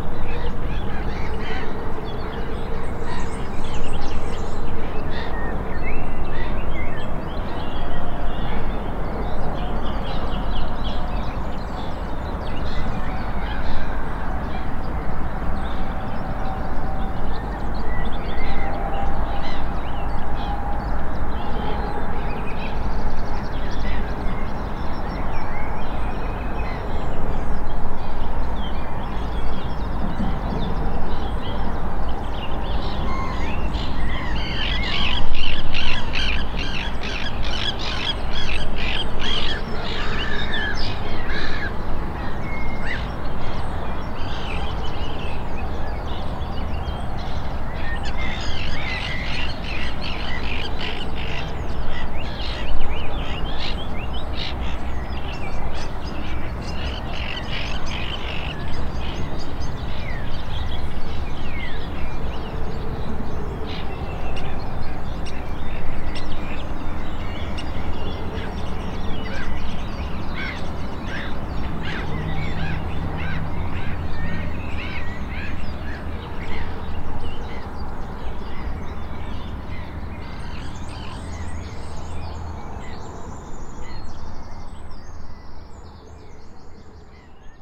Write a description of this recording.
Stereo recording of mostly black-headed gulls at Mythology park pond. Recorded with Rode NT4 on Sound Devices MixPre 6 II.